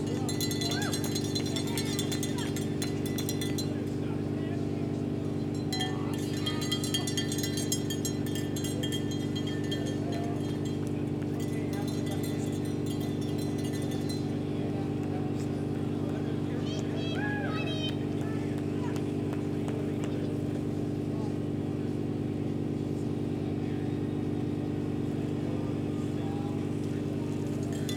Zumbro River Bottoms - Zumbro Ultra Marathon
Sounds of Aid Station at the Zumbro Ultra Marathon. The Zumbro Ultra Marathon is a 100 mile, 50 mile, 34 mile, and 17 mile trail race held every year at the Zumbro River Bottoms Management area.
Recorded with a Zoom H5
Minnesota, United States, 9 April 2022